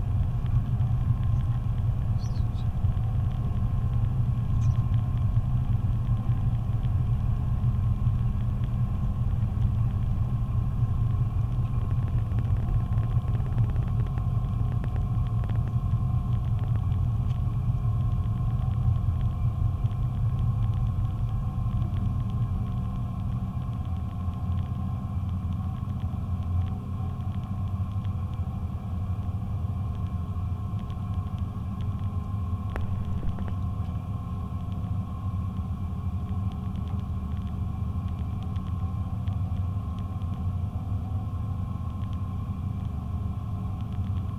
Boat crossing from Vila Real de Santo António to Ayamonte. 3 piezos attached to the outdoor seats and metal top rail of the boat, capturing the motor and resonance of the boat. Recorded into a SD mixpre6, Mixed in post to stereo.